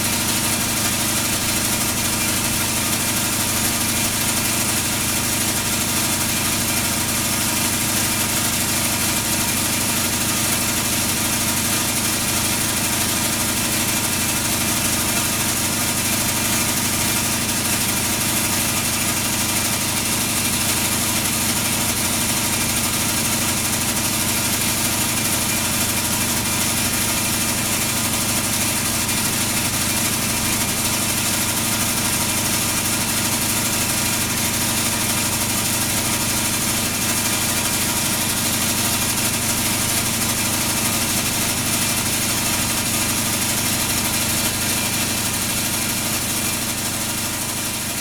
This is the biggest dump of Belgium. We are here in the factory producing electricity with the gas. This is the sound of a turbine.
Court-St.-Étienne, Belgium, 2 October 2016